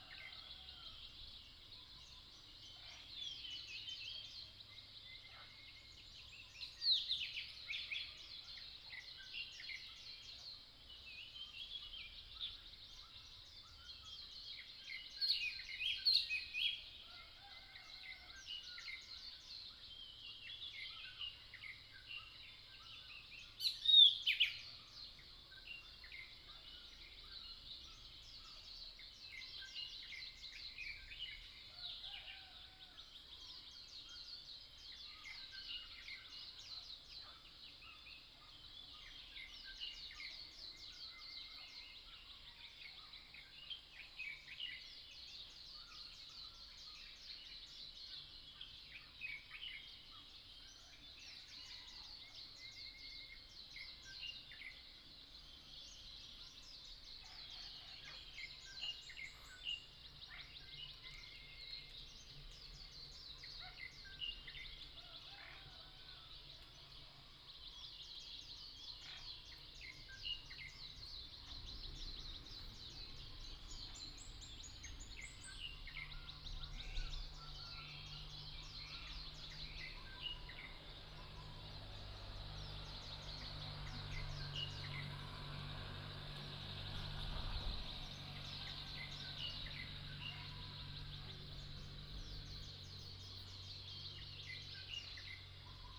綠屋民宿, 桃米里Puli Township - Birdsong
Birdsong, Chicken sounds, Early morning, at the Hostel